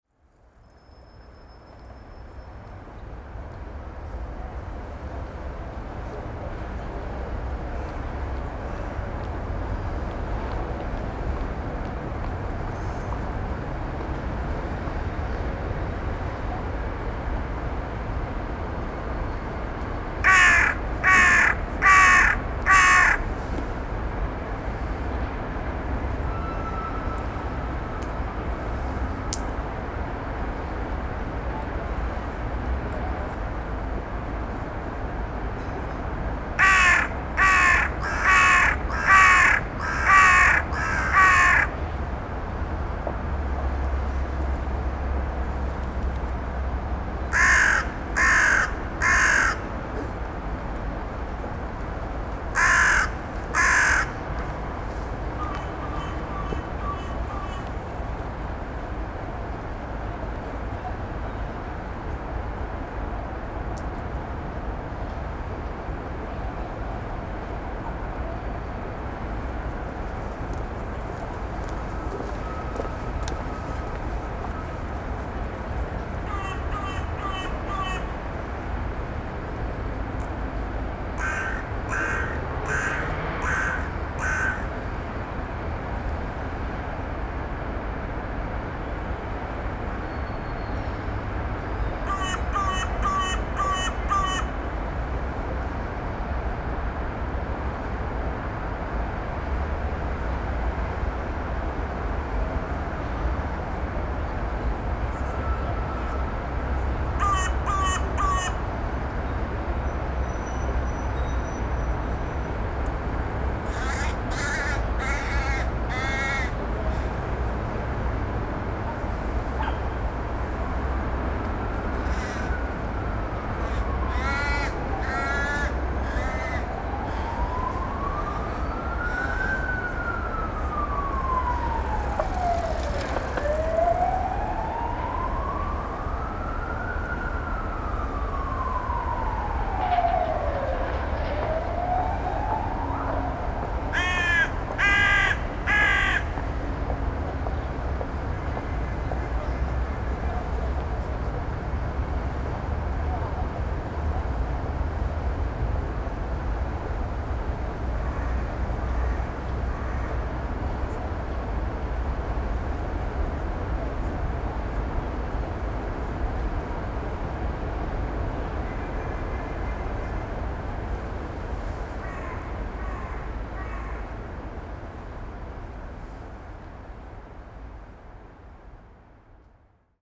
South Bank, London - Birds Crowing and Cawing
Birds and the drone of the city.
London, UK